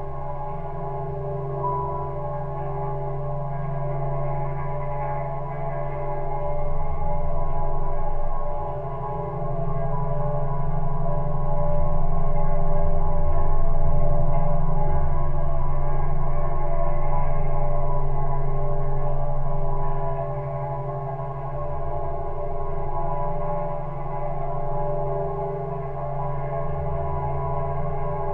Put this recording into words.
Geophone on some railings under the bridge. Drone is the tone!